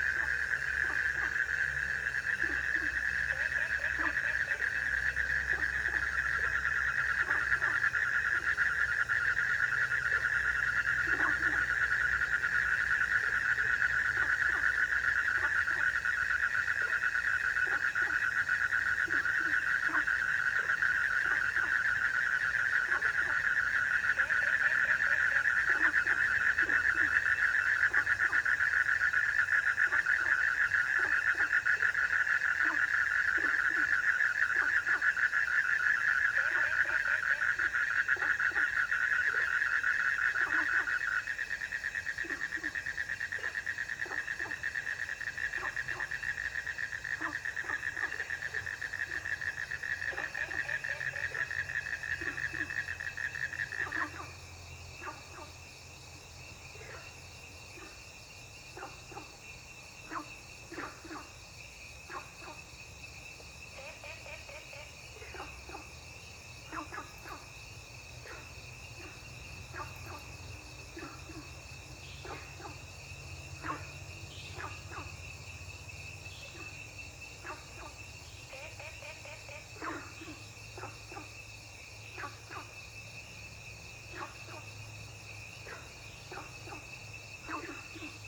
Ecological pool, Frogs chirping
Zoom H2n MS+XY
Nantou County, Puli Township, 桃米巷16號, 17 May, 20:42